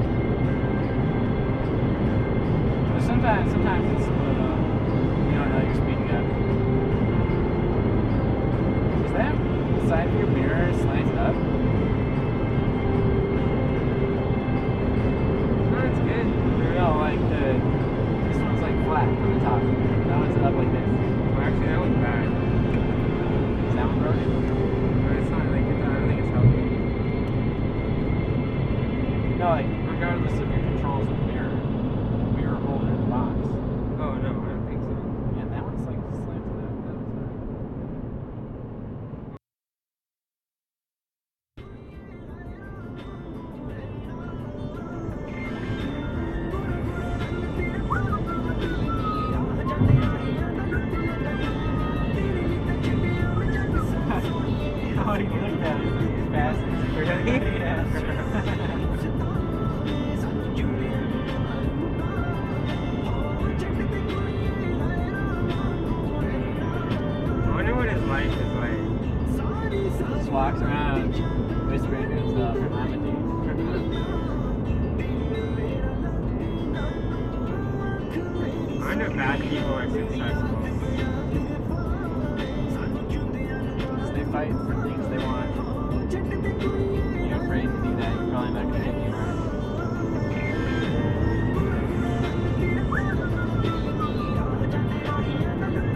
Acura on 5
This is a spliced recording of my trip down highway 5. My friend and I encounter a man and his child in a silver Acura sedan.
Kern County, California, United States of America